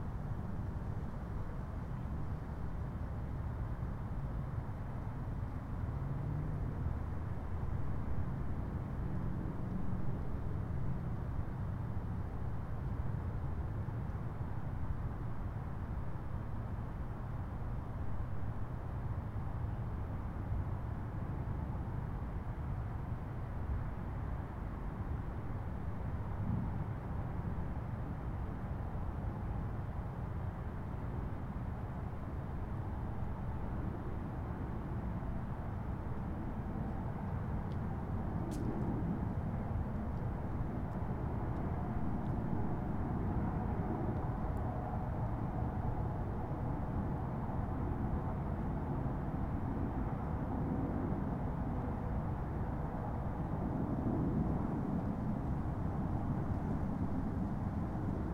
Tolleson Park, McCauley Rd, Smyrna, GA, USA - 2020 Winter Solstice Stargaze
A member of my family and I were here in order to view the great conjunction between Jupiter and Saturn on the solstice. A couple of other families were also here for the viewing, but they leave at the beginning of the recording. There's a little bit of wind blowing the leaves around and traffic is heard in the background. Taken with the onboard unidirectional mics of the Tascam Dr-100mkiii.
21 December, 18:15